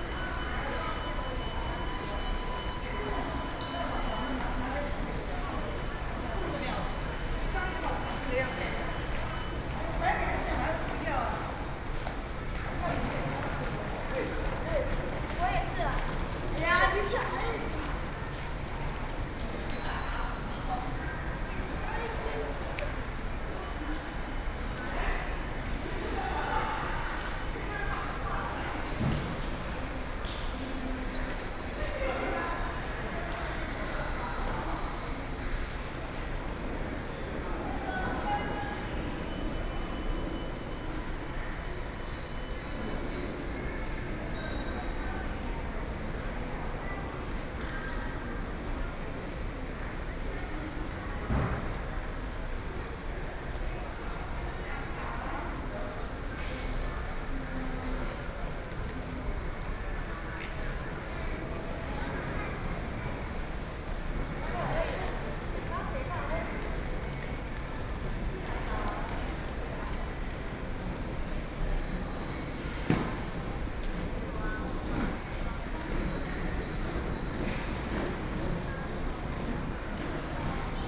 ChiayiStation, THSR - Station hall
in the Station hall, Sony PCM D50 + Soundman OKM II
Taibao City, Chiayi County, Taiwan, 26 July, ~20:00